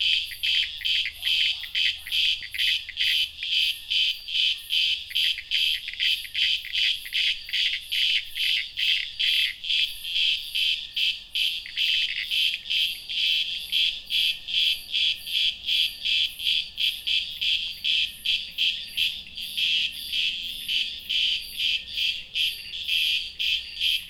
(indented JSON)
{
  "title": "Parque Natural Municipal Montanhas de Teresópolis, Petrópolis - RJ, Brasil - Cicadas",
  "date": "2014-12-22 19:00:00",
  "description": "Cicadas singing in a summer afternoon",
  "latitude": "-22.36",
  "longitude": "-42.96",
  "altitude": "920",
  "timezone": "America/Sao_Paulo"
}